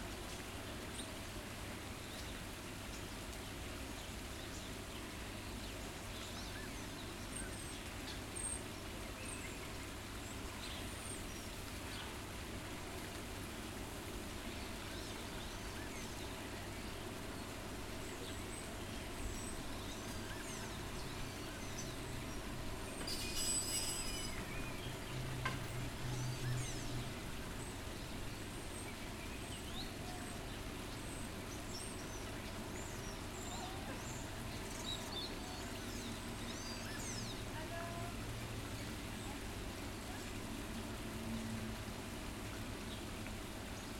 Ménagerie, le Zoo du Jardin des Plantes